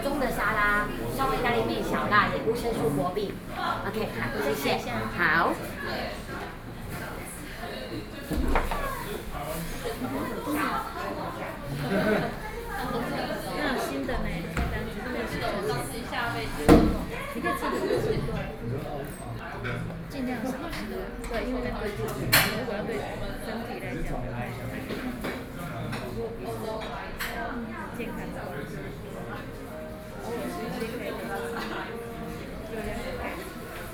Taipei, Taiwan - In the restaurant
In the restaurant, Sony PCM D50 + Soundman OKM II